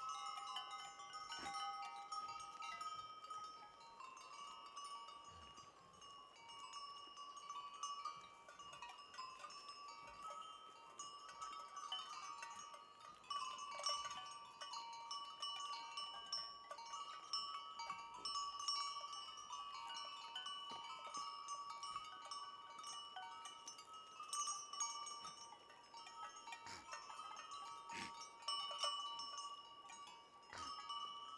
Patmos, Liginou, Griechenland - Weide Ziegen 01
Ein Künstlerfreund von mir hat bis 2010 hier ein Freiluftatelier.
Ziegen, Glocken, Furzen.